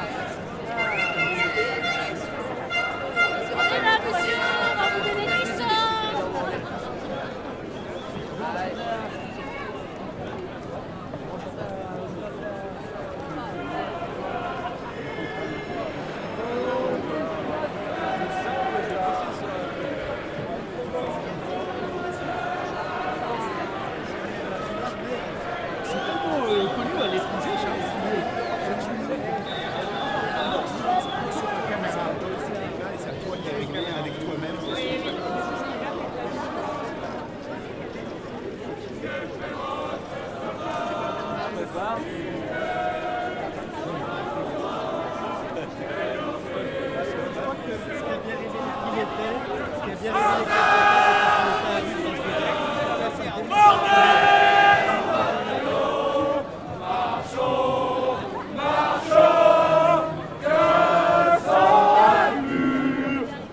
Pl. du Peuple, Saint-Étienne, France - St-Etienne (42000)
St-Etienne (42000)
Manifestation des "Gilets Jaunes"